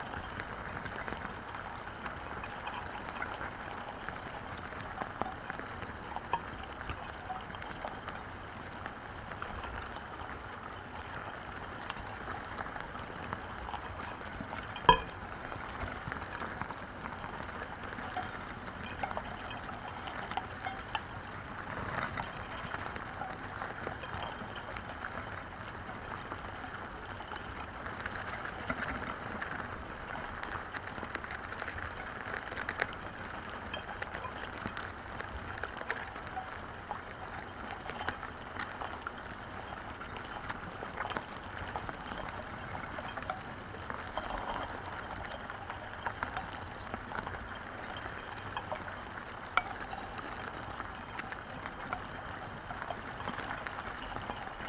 contact mics on ant mound in Estonia
17 June, ~02:00